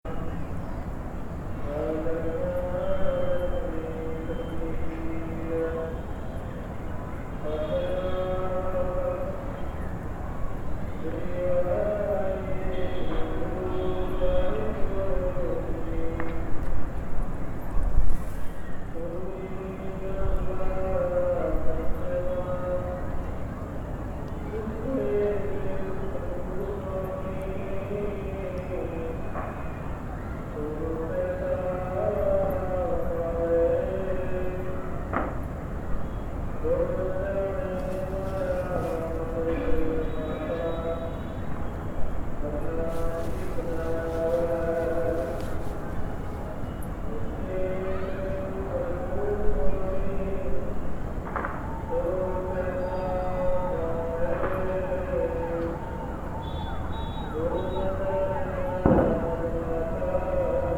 Gwalior Fort, Gwalior, Madhya Pradesh, Inde - End of the day atmosphere
The atmosphere of Gwalior, recorded from the Fort. A very sad melody is sung by a man far away.
Madhya Pradesh, India, 24 October 2015